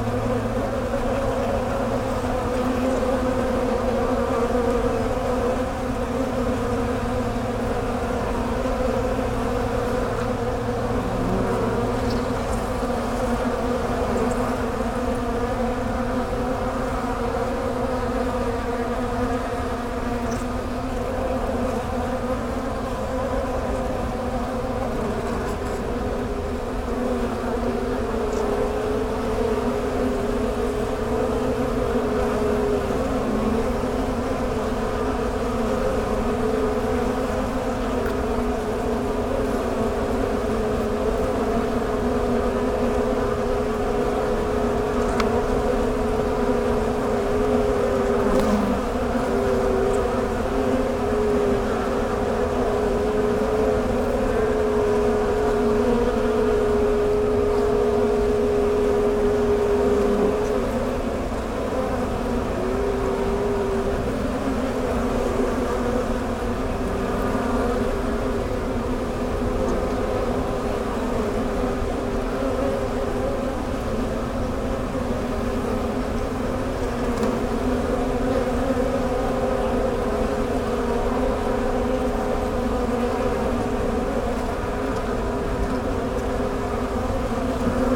honey bee hive recorded in a just-collapsed oak tree
Bridgeton, NJ, USA, 19 July